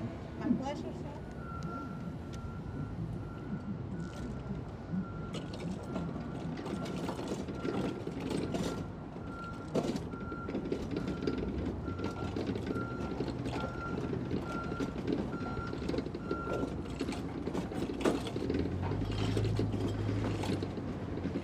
Northwest Berkeley, Berkeley, CA, USA - Berkeley recycling center
recycling beer bottles worth $13.77